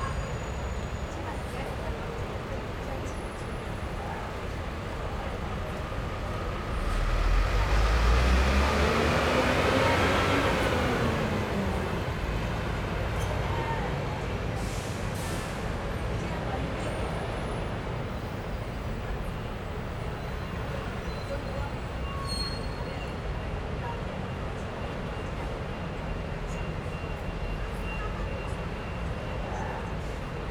In the bus transfer station, Traffic Sound
Zoom H4n +Rode NT4